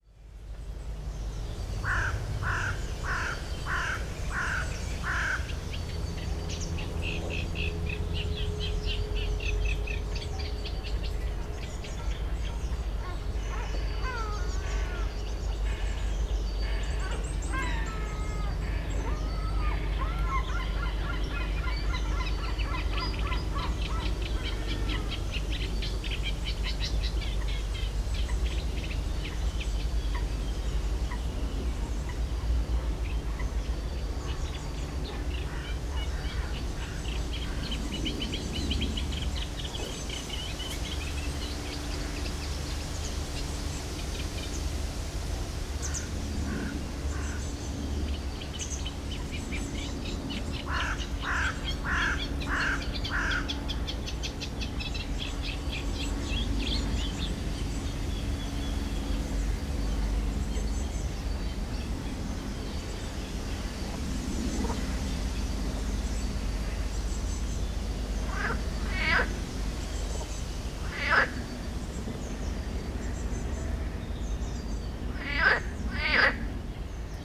Chem. de la Roselière, Aix-les-Bains, France - Roselière dans le vent
L'image google ne correspond pas à la configuration des lieux à l'époque, rousserole effarvate, goelands, corneilles, grenouilles. le vent dans les roseaux.